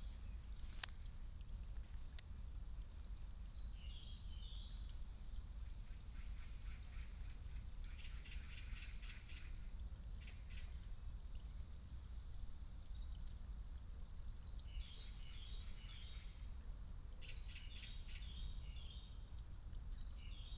榕園, Jinhu Township - Birds singing
Birds singing, In the park
4 November 2014, ~17:00